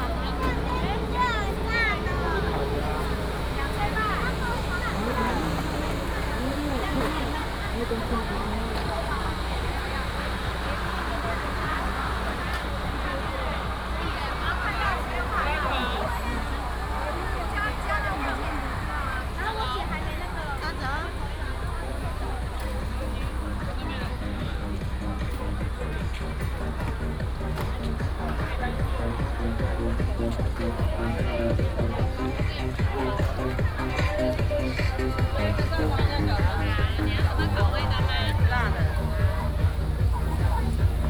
楊明夜市, Yangmei Dist. - night market
night market, vendors peddling